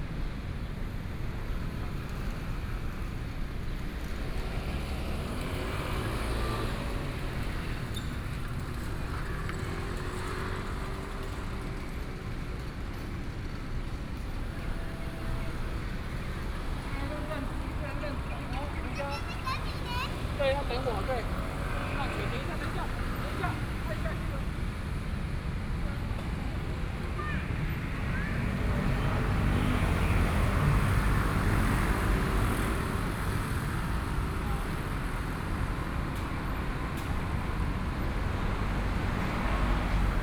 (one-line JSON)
{"title": "苓雅區福南里, Kaohsiung City - in front of the temple", "date": "2014-05-15 17:23:00", "description": "In the square in front of the temple, Traffic Sound", "latitude": "22.63", "longitude": "120.33", "altitude": "9", "timezone": "Asia/Taipei"}